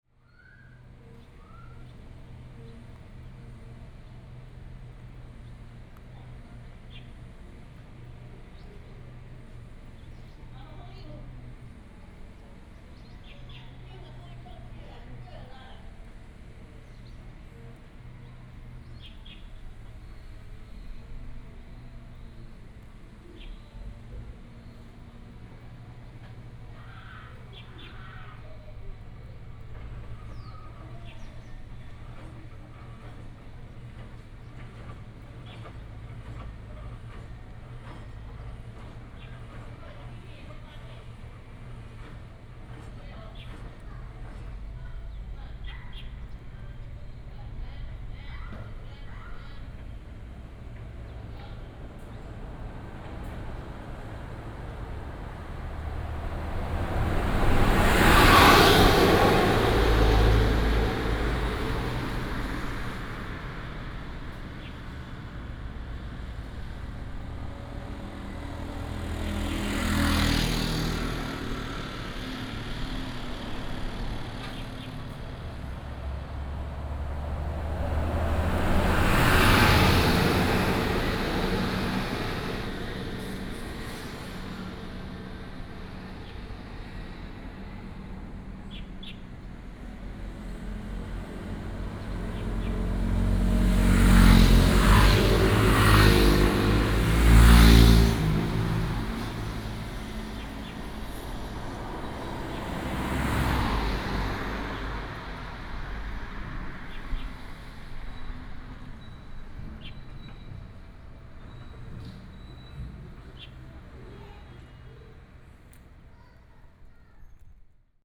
分水嶺社區活動中心, Manzhou Township - On the tribe roadside
On the tribe roadside, Bird sound, Traffic sound
Manzhou Township, 200縣道